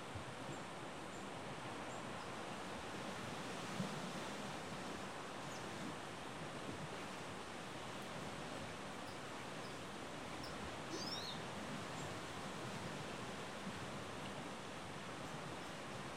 Cape Tribulation, QLD, Australia - myall beach in the morning
by the edge of the thick, spindly coastal vegetation.
recorded with an AT BP4025 into an Olympus LS-100.
Cape Tribulation QLD, Australia